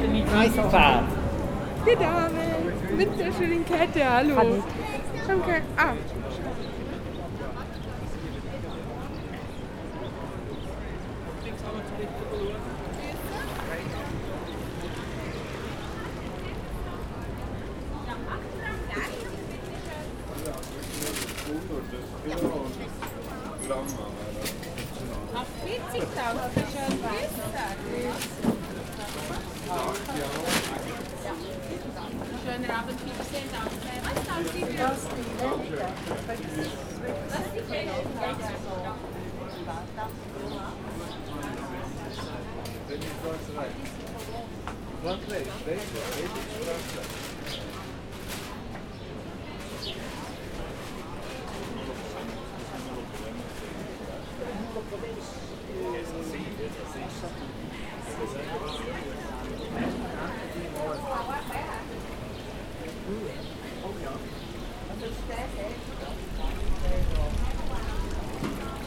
Lauben, Bern, Altstadt, Arkaden, Flanieren Richtung Zytglockenturm, Dialekt: Zytgloggeturm, Bärn du edle Schwyzer Stärn
Bern, Schweiz, 10 June